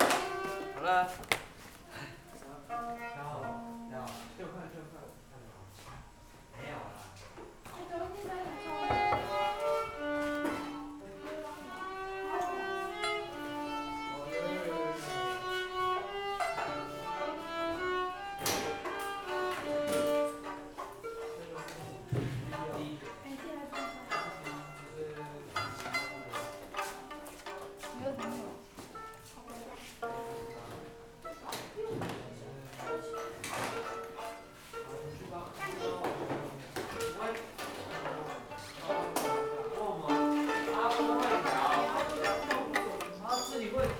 Pupils are for violin tuning, Zoom H6